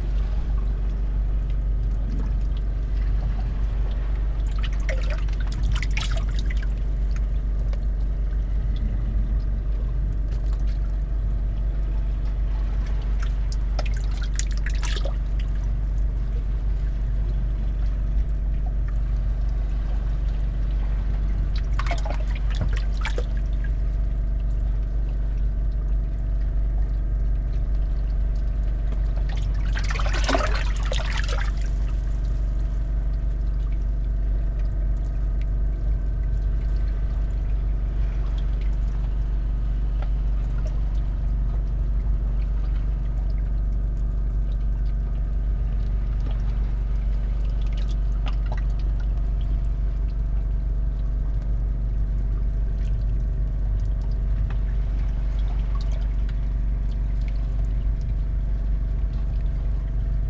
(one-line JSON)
{"title": "small round pool, Novigrad, Croatia - eavesdropping: flux", "date": "2012-09-10 23:38:00", "description": "round pool next to the sea; above the opening for seawater, small waves and engine of fishing boat - at night", "latitude": "45.31", "longitude": "13.56", "altitude": "3", "timezone": "Europe/Zagreb"}